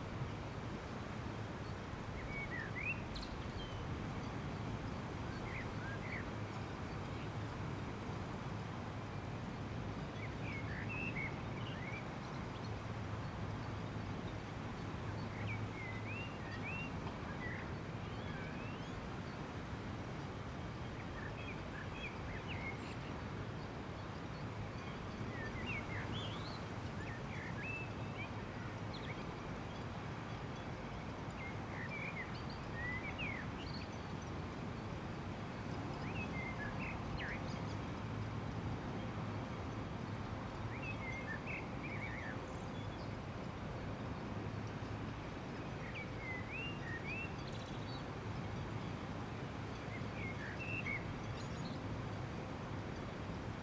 P@ysage Sonore La Rochelle .
4 x DPA 4022 dans 2 x CINELA COSI & rycote ORTF . Mix 2000 AETA . edirol R4pro